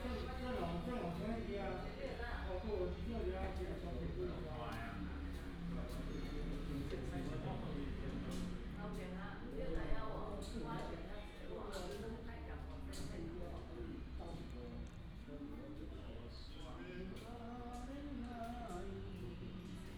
Inside the temple, Bird call, The old man is playing chess